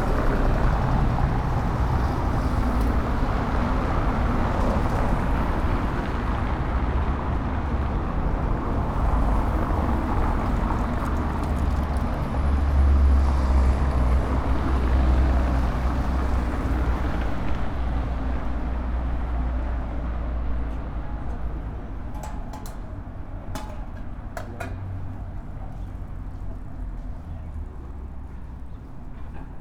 C. Francisco I. Madero, Centro, León, Gto., Mexico - Caminando por la calle Madero.
Walking down Madero street.
From Zaragoza street to Donato Guerra street.
I made this recording on march 29th, 2022, at 6:00 p.m.
I used a Tascam DR-05X with its built-in microphones and a Tascam WS-11 windshield.
Original Recording:
Type: Stereo
Esta grabación la hice el 29 de marzo de 2022 a las 18:00 horas.
Guanajuato, México, March 2022